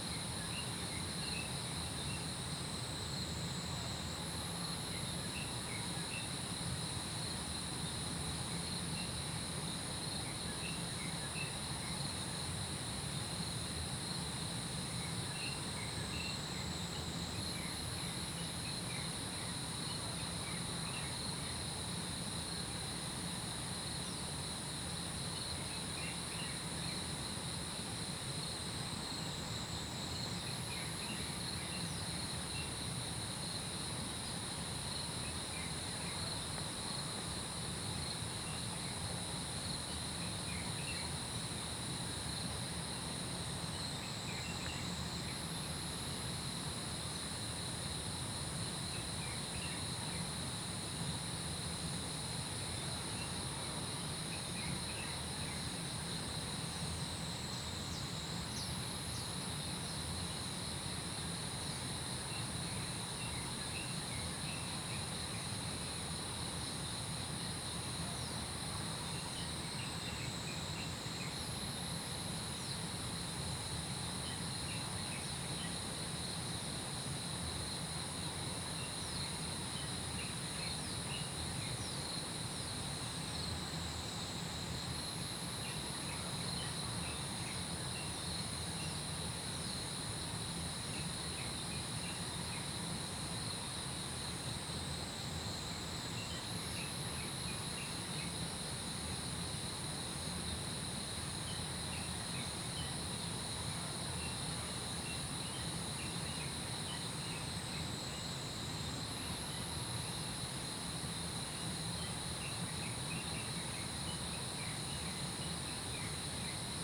水上巷, 南投桃米里, Taiwan - Early morning
Early morning, Bird sounds, Insect sounds
Zoom H2n MS+XY